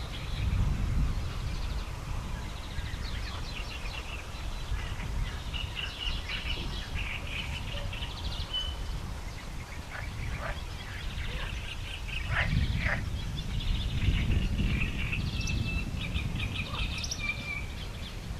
hohenau, habitat
habitat at the ponds of the former surgar factory of hohenau, in the background the road from the slovak border
Niederösterreich, Österreich, European Union, 2 July, ~14:00